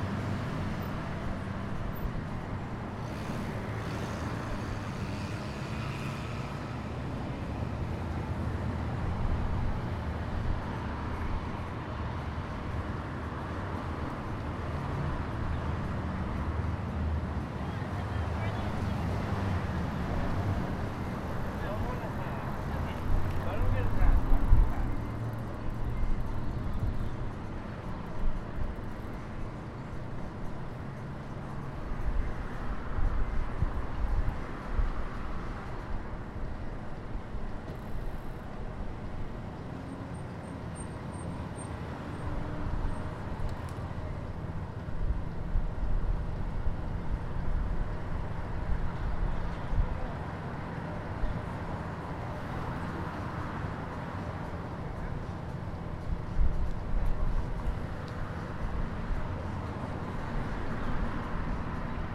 standing on the street corner of Colorado Ave, next to a bar with music playing, cars and people casually roll by